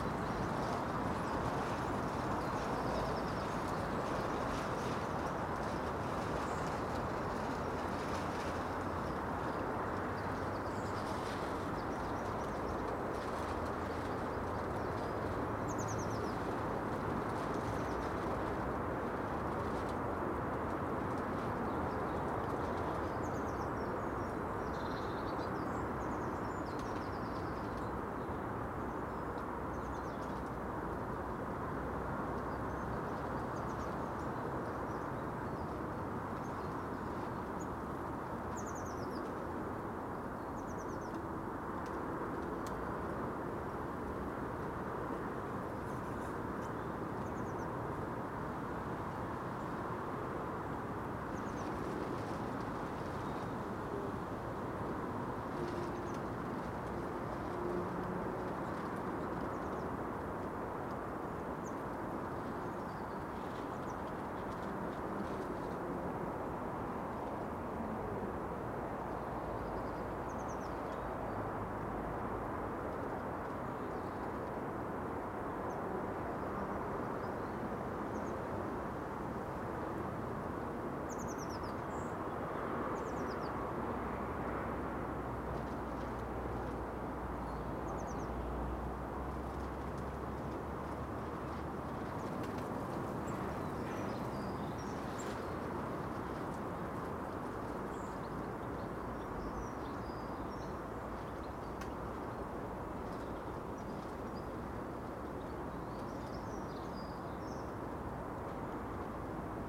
The Drive Moor Crescent Little Moor Highbury Mildmay Road Brentwood Avenue Tankerville Terrace
Cordons espaliers and pleaches
an orchard of pruning
a blue tit flies through
Neatened trees
against the fence
the tumble of water tank compost bin and bug hotels
Gavin May Queen Howgate Wonder
Ouillin’s Gage
Vranja Quince
24 March 2021, ~11:00